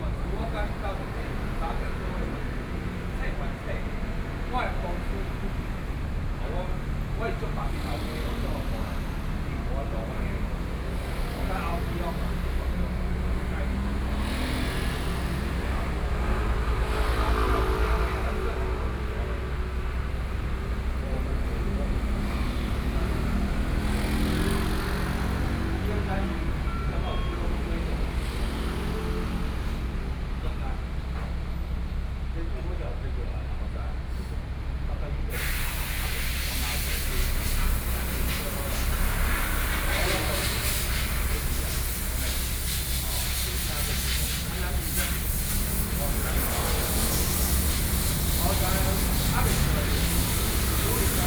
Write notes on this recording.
In front of the convenience store, Traffic Sound, Sony PCM D50+ Soundman OKM II